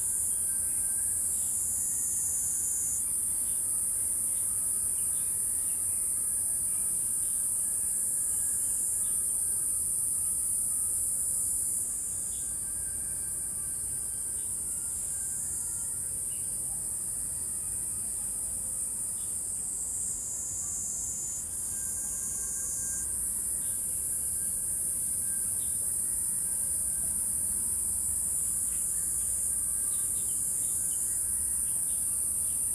忠烈祠, Hualien City - Birds and insects
in the Park, Birds and insects
Zoom H2n MS+XY
Hualien County, Taiwan, 29 August, ~07:00